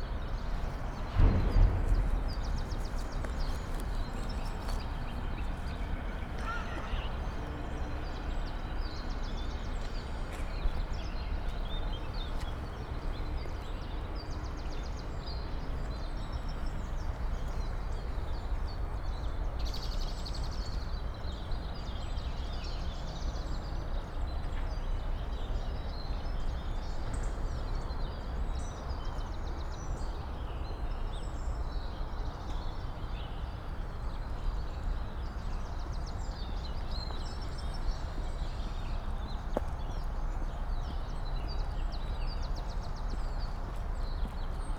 Berlin Buch, walking over an derelict skater park.
(Sony PCM D50, DPA4060)